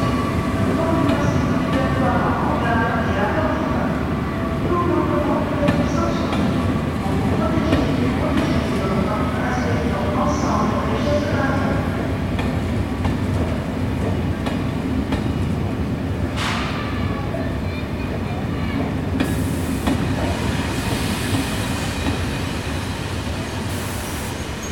Boulevard de Marengo, Toulouse, France - SNCF station atmosphere
Train, engine, SNCF station atmosphere, Corona Virus Message
Captation : Zoom h4n
France métropolitaine, France, 16 May 2021